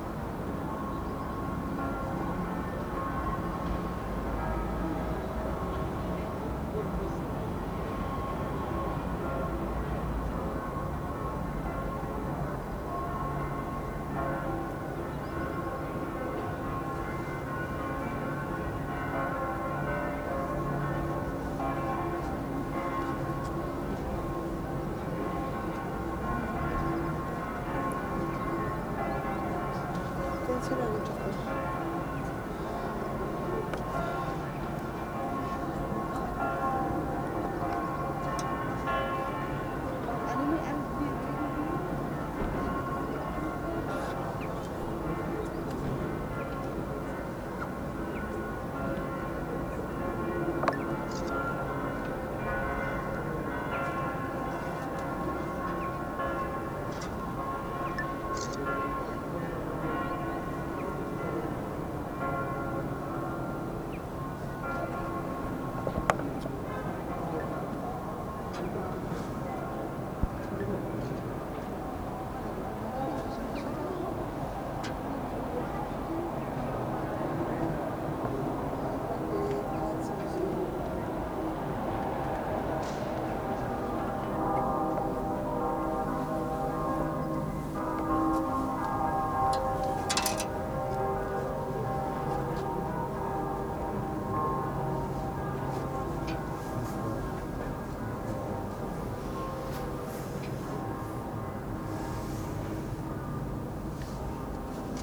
Astronomical Tower
Combination of Bells ringing on a Sunday at noon